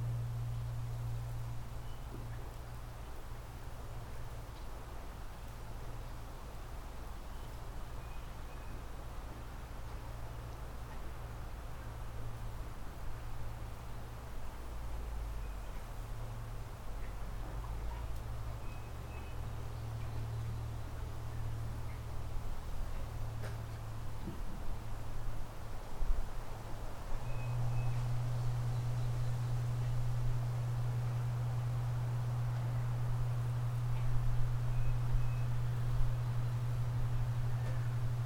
Juniper Island, ON, Canada - Juniper Island Porch
On the porch of the Juniper Island Store (before it opened for the summer season), looking out over Stony Lake, on a warm sunny day. Recorded with Line Audio OM1 omnidirectional microphones and a Zoom H5.
Peterborough County, Ontario, Canada